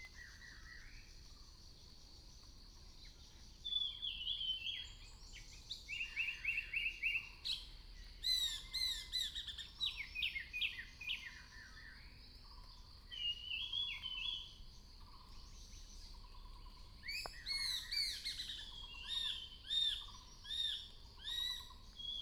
Zhonggua Rd., 桃米里 - Birds singing
Bird sounds
Binaural recordings
Sony PCM D100+ Soundman OKM II
Puli Township, Nantou County, Taiwan, 6 May, 06:18